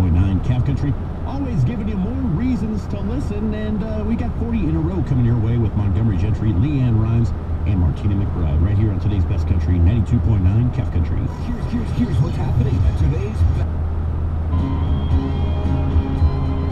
neoscenes: radio scan on I-40
Continental Divide, NM, USA